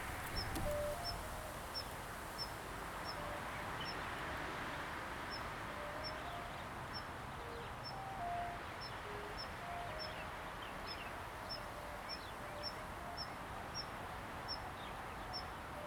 雙鯉湖溼地, Jinning Township - Birds singing and Wind
Birds singing, Wind, Distance came the sound of music garbage truck
Zoom H2n MS+XY
金門縣 (Kinmen), 福建省, Mainland - Taiwan Border, 3 November, 08:06